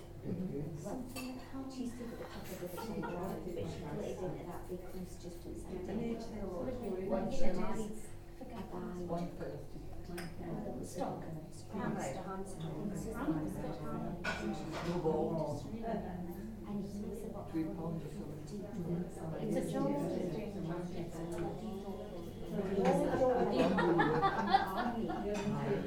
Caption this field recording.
We were wandering along the High Street in Hawick, wondering where and what to eat, and lingering by a sign for "The Pickled Orange". A passerby announced that this was a great place to eat; that everything is freshly cooked; good homemade food etc. so we followed up on her recommendation for a light lunch there and headed down a narrow alley to a doorway. It was a lovely place, quite tucked away and dark, and the food was indeed very nice. However, the place was also nice for its lack of music, and for its cosy acoustic. All the other tables were taken up with ladies, lunching. The music of Scottish women talking together in a small, low-ceiling space was a nice accompaniment to lunch and I recorded the sound because it is so rare to find a place to lunch where there is no background music, and where all you can hear is the nice sound of people enjoying one another's company. EDIROL R-09 inbuilt mics for this one, another super simple recording set up.